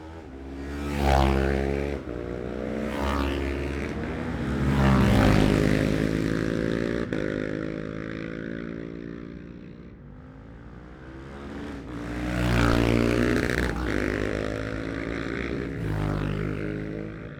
Jacksons Ln, Scarborough, UK - olivers mount road racing 2021 ...
bob smith spring cup ... twins group B practice ... luhd pm-01 mics to zoom h5 ...